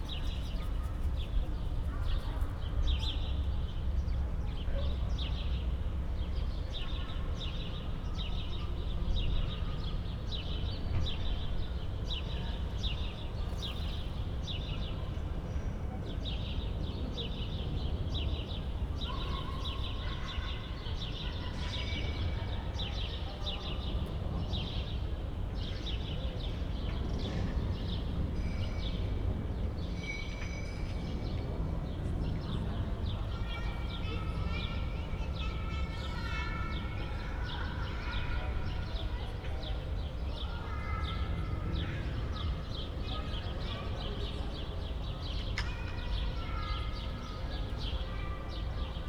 Bruno-Apitz-Straße, Berlin, Deutschland - within residential block
within a residental building block, sounds echoing between the walls, early evening ambience
(Sony PCM D50, DPA4060)